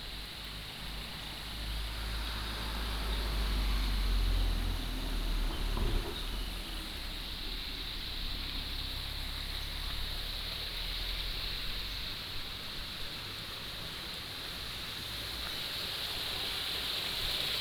{
  "title": "茅埔坑溪生態公園, 埔里鎮桃米里 - Ecological Park",
  "date": "2015-04-30 05:47:00",
  "description": "Ecological Park, Walking along the stream",
  "latitude": "23.94",
  "longitude": "120.94",
  "altitude": "470",
  "timezone": "Asia/Taipei"
}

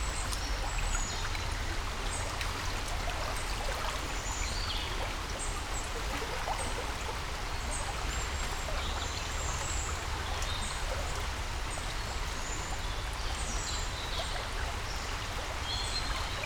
{
  "title": "Brje, Dobravlje, Slovenia - River Vipava",
  "date": "2020-10-18 11:18:00",
  "description": "River Vipava and birds. Recorded with Lom Uši Pro.",
  "latitude": "45.87",
  "longitude": "13.80",
  "altitude": "70",
  "timezone": "Europe/Ljubljana"
}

